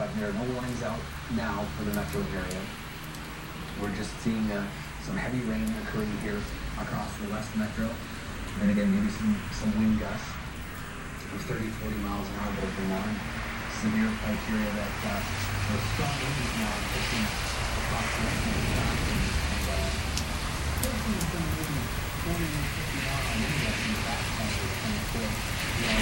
Minneapolis, USA
storm over lake calhoun, minneapolis- tornado coming